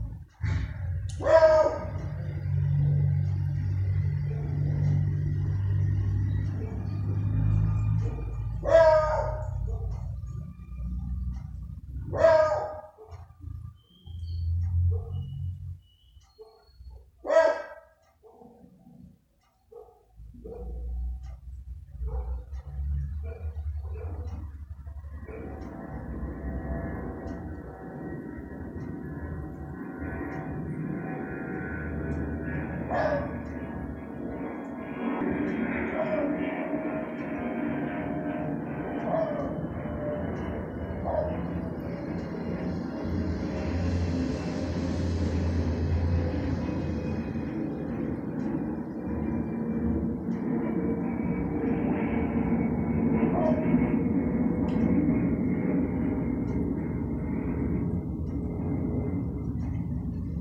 Cl. 53b Sur, Bogotá, Colombia - Interior apartamento bosa chicala
Recorded in the morning, with a cellphone in the interior of an apartment, everything seems in general as if you were on a fishbowl, something usual when you live on the first floor in the middle of other apartments. Still, you can hear the characteristic sound of airplanes, dogs, people, and cars.